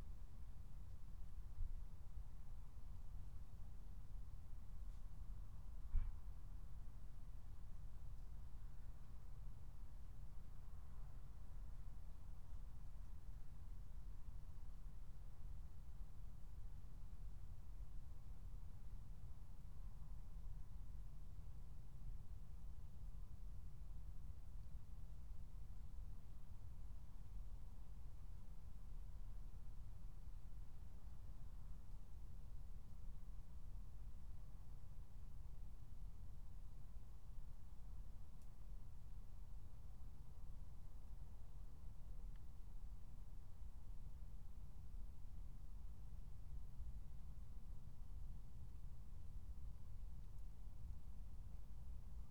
02:00 Berlin, Tempelhofer Feld
Deutschland